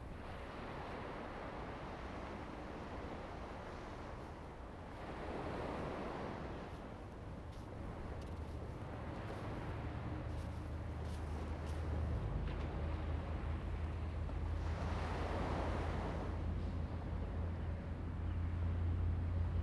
料羅海濱公園, Jinhu Township - At Waterfront Park
At Waterfront Park, At the beach, Sound of the waves
Zoom H2n MS+XY